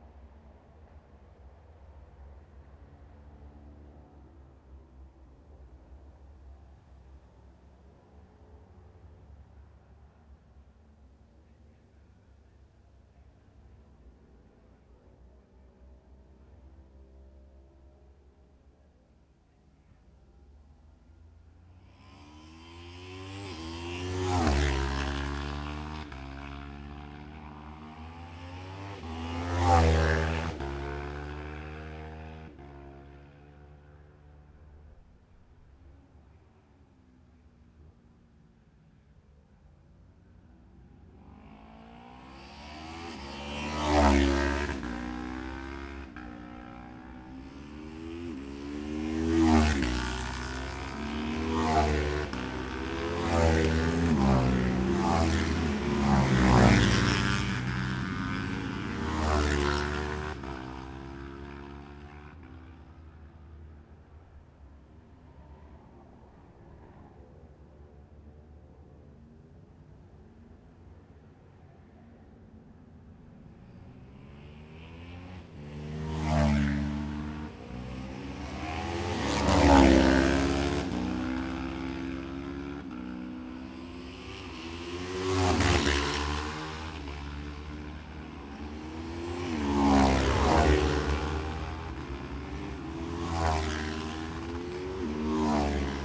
Jacksons Ln, Scarborough, UK - Gold Cup 2020 ...
Gold Cup 2020 ... twins practice ... Memorial Out ... dpa 4060s to Zoom H5 clipped to bag ...
11 September